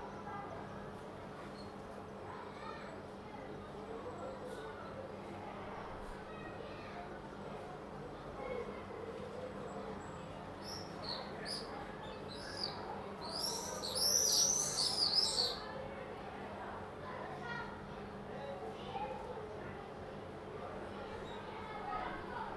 Leopld ha-Sheni St, Acre, Israel - Neigborhood in Acre
3 May 2018, 13:30